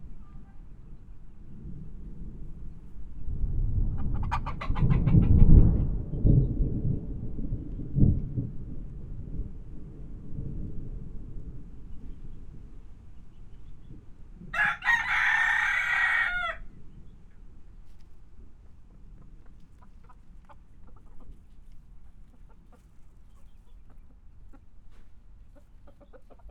Whitehill, Nova Scotia, CANADA
A thunder storm moves in from the South East into rural Nova Scotia. Recorded on the North Side of a barn with free range chickens nearby.June 18th 2010. Recordist, Mark Brennan of Wild Earth Voices.
World Listening Day
NS, Canada, 18 July 2010